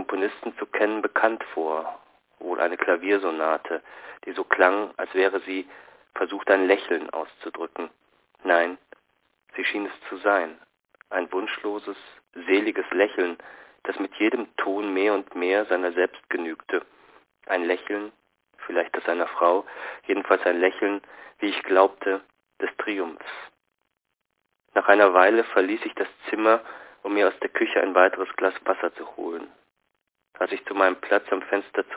Synchronisation einer Sonate - Synchronisation einer Sonate - hsch ::: 27.03.2007 18:45:15
Cologne, Germany